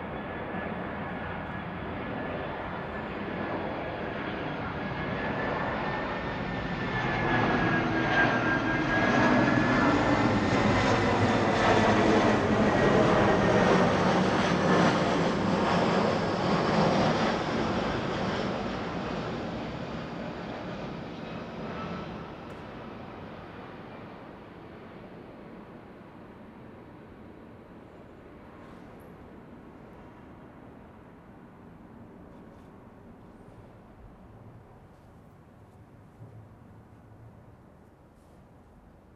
Lisbon, Portugal - Night of Blue Moon
Night of blue moon. Quiet night interrupted by the passing airplanes.
Zoom H6